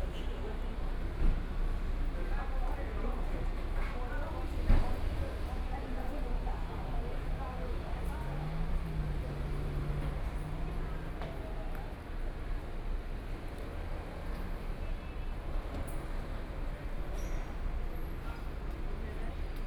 Miaoli Station, Taiwan - Station exit
Station exit, Zoom H4n + Soundman OKM II